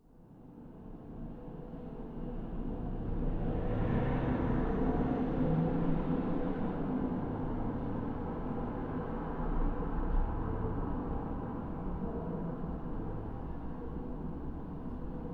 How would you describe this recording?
listening to globe sculpture with contact mics